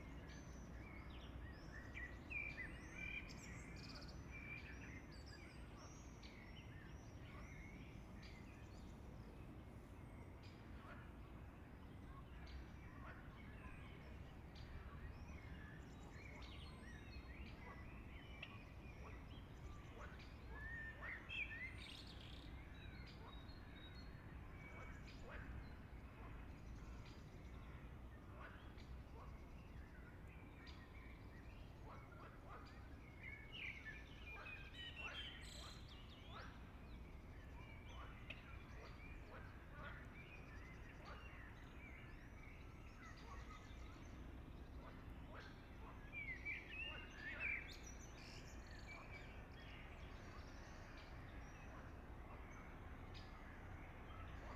{"title": "Zürich, Wynegg, Schweiz - Landambi", "date": "2005-05-28 20:53:00", "description": "Vögel, Frösche, Passage Helikopter, 1. Glockenschlag Kirche Erlöser, 2. Glockenschlag Kirche Neumünster.", "latitude": "47.36", "longitude": "8.56", "altitude": "451", "timezone": "Europe/Zurich"}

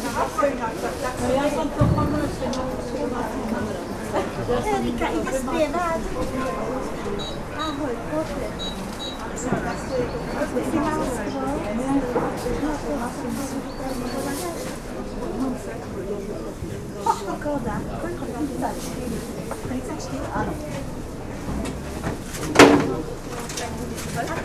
{"title": "bratislava, market at zilinska street - market atmosphere X", "date": "2013-10-26 12:13:00", "description": "recorded with binaural microphones", "latitude": "48.16", "longitude": "17.11", "altitude": "155", "timezone": "Europe/Bratislava"}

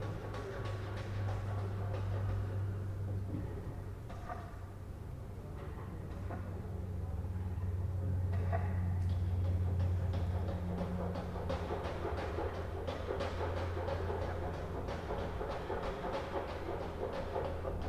{"title": "from/behind window, Mladinska, Maribor, Slovenia - fom/behind window", "date": "2012-08-30 16:40:00", "description": "constructions on nearby roof", "latitude": "46.56", "longitude": "15.65", "altitude": "285", "timezone": "Europe/Ljubljana"}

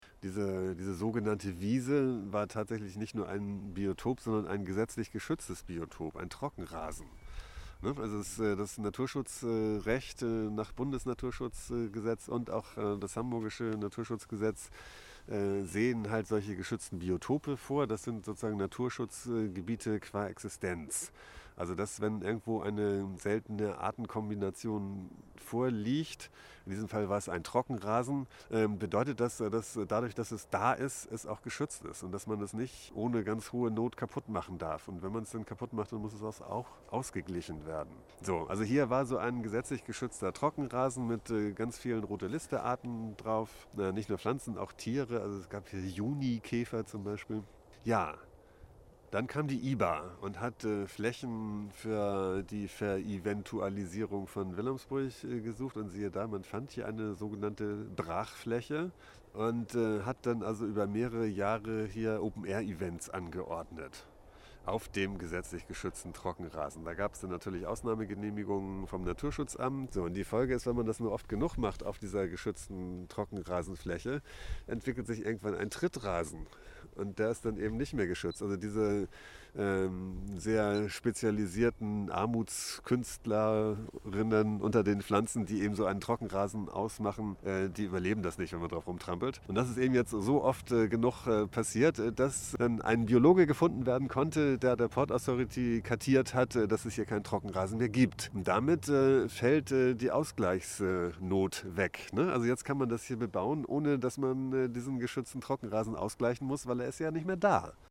{"description": "Von dem Trockenrasen zum Trampelrasen - wie der Naturschutz umgangen wird.", "latitude": "53.52", "longitude": "9.98", "altitude": "1", "timezone": "Europe/Berlin"}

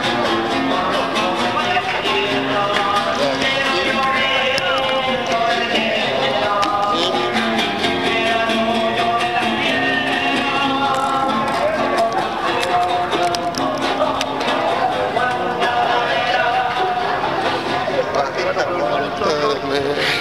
{"title": "plaza echaurren", "date": "2008-03-14 22:51:00", "description": "concert de petit vieux davant un public d handicapé", "latitude": "-33.04", "longitude": "-71.63", "timezone": "America/Santiago"}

